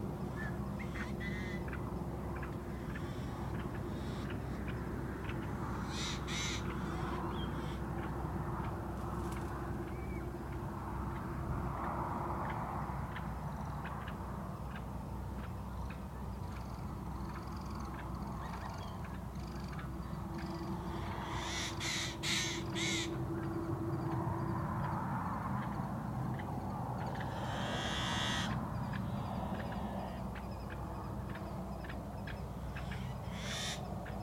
Lone Pine, CA, USA - Sunset Chorus of Birds on Diaz Lake
Metabolic Studio Sonic Division Archives:
Sunset Chorus of Birds on Diaz Lake. Includes ambient traffic noise from highway 395. Recorded on Zoom H4N